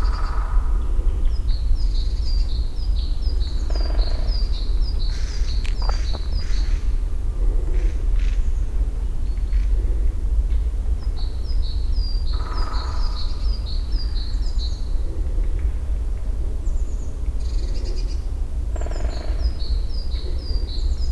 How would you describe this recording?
(binaural) a warm, sunny day in the forest. even though it's february many birds are active. beak knocking of the woodpeckers spreads around the forest.